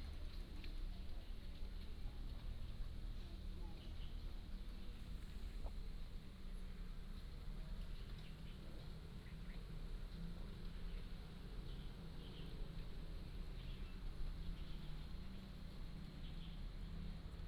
碧雲寺竹林生態池, Hsiao Liouciou Island - In bamboo
In bamboo, Birds singing
Liouciou Township, Pingtung County, Taiwan, 1 November 2014, 14:54